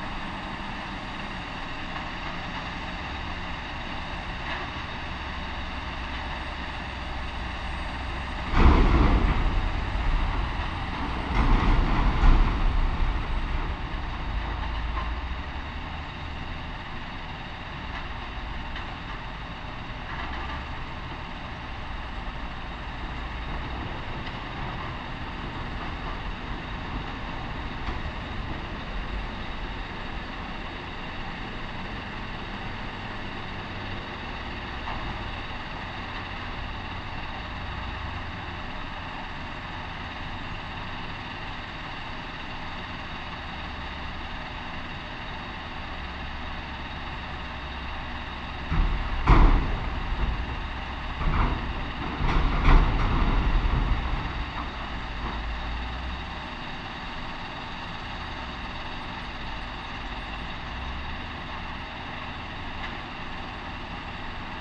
Via Trieste, Savogna DIsonzo GO, Italy - Quarry Devetachi
Quarry devetachi, crushing stones, trucks bring in new material.
Recorded with LOM Uši Pro, AB Stereo Mic Technique, 50cm apart.
Cava Devetachi
UTI Carso Isonzo Adriatico / MTU Kras Soča Jadran, Friuli Venezia Giulia, Italia, 23 December 2020, 10:32